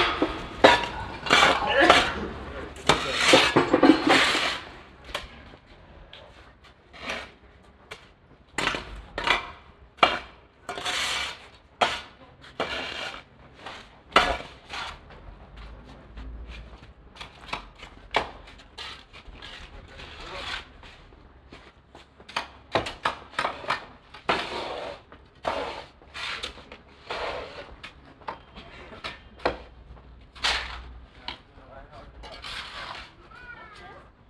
kleine stadtbaustelle im fussgängerzentrum, morgens
soundmap nrw:
topographic field recordings, social ambiences
mittelstrasse, fussgängerzone